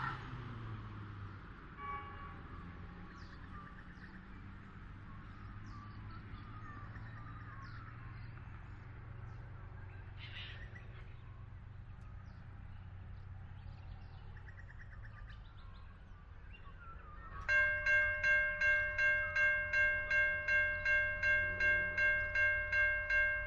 Overland Train to Melbourne, 8:45am, Littlehampton, South Australia, - Overland Train to Melbourne 8:45am
Every day the Overland Train between Adelaide & Melbourne passes here.
Mon, Wed & Fri it passes at about 8:45am heading east to Melbourne, Victoria.
On Tue, Thu & Sat it passes at about 4:45pm on it's way back to Adelaide.
I live about 600 metres from here.
AT-3032 stereo pair were set up on the South West corner of the T-Junction near the row of planted trees.
Recorded at 8:45am on Friday 5 September 2008